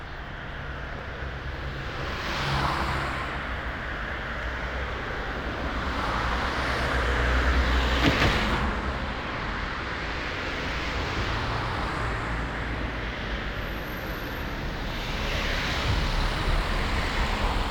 Ascolto il tuo cuore, città. I listen to your heart, city. Chapter CLIX - No fever Saturday night in the time of COVID19: Soundwalk.
"No fever Saturday night in the time of COVID19": Soundwalk.
Chapter CLIX of Ascolto il tuo cuore, città. I listen to your heart, city
Saturday, February 27th, 2021. San Salvario district Turin, walking round San Salvario district, just after my first COVID-19 vaccine.
Three months and twenty days of new restrictive disposition due to the epidemic of COVID19.
Start at 9:54 p.m. end at 10:19 p.m. duration of recording 24’48”
The entire path is associated with a synchronized GPS track recorded in the (kmz, kml, gpx) files downloadable here:
Piemonte, Italia, 27 February 2021, ~22:00